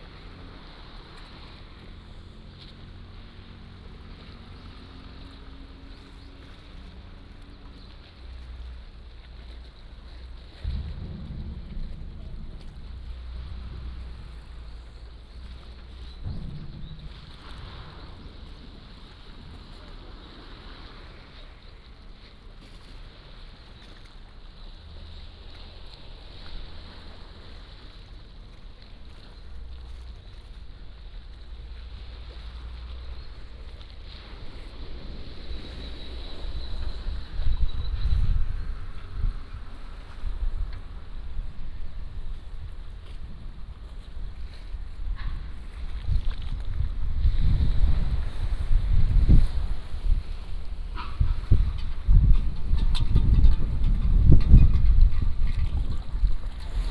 開元港, Koto island - In the dock
In the dock, Sound tideㄝConstruction Sound
Taitung County, Taiwan, 28 October